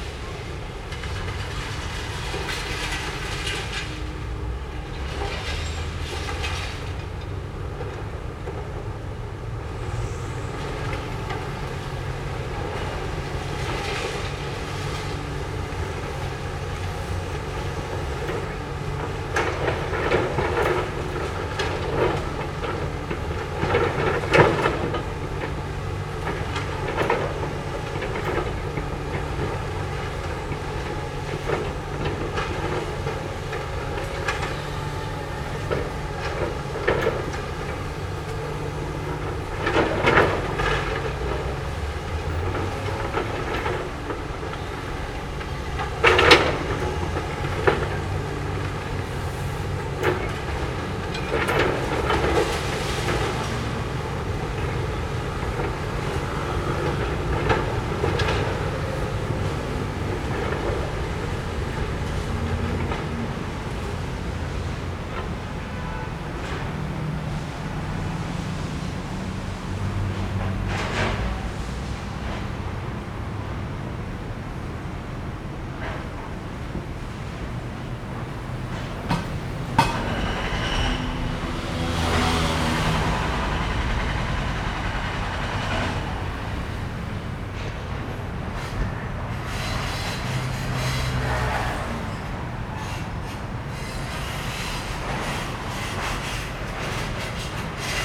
Ln., Sec., Roosevelt Rd. - At the construction site next to the park
At the construction site next to the park, traffic sound, Construction noise
Zoom H4n + Rode NT4
Zhongzheng District, Taipei City, Taiwan